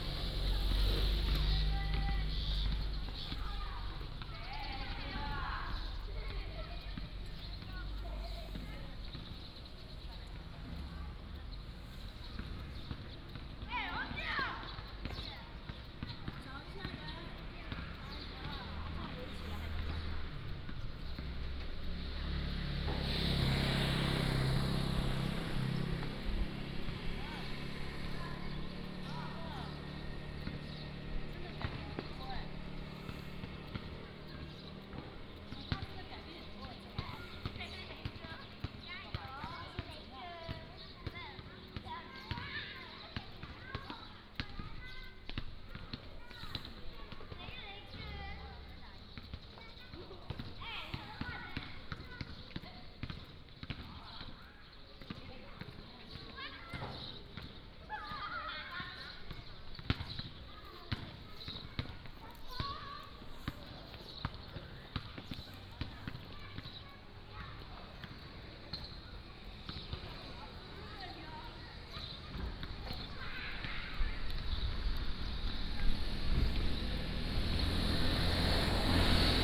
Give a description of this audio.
In the next school, Traffic Sound, Small village